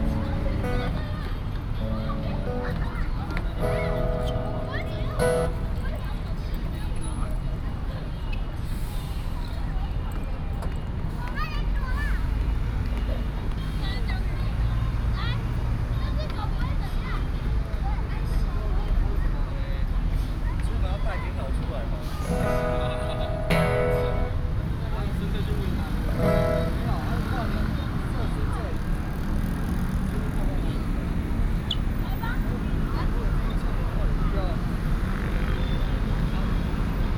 海洋廣場, Ren'ai District, Keelung City - In the Plaza
In the Plaza, Traffic Sound
Keelung City, Taiwan, 2 August 2016